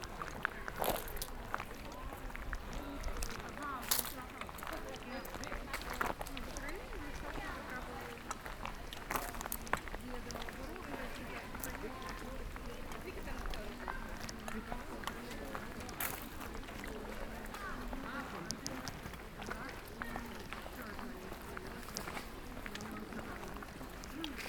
we are actually sharing mic and headphone while walking together with a little girl